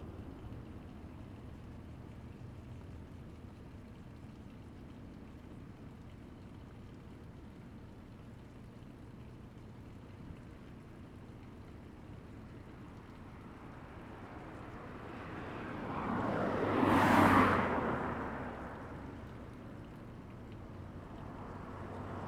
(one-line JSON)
{"title": "大竹村, Dawu Township - Traffic Sound", "date": "2014-09-05 12:02:00", "description": "Traffic Sound, The weather is very hot\nZoom H2n MS +XY", "latitude": "22.46", "longitude": "120.94", "altitude": "15", "timezone": "Asia/Taipei"}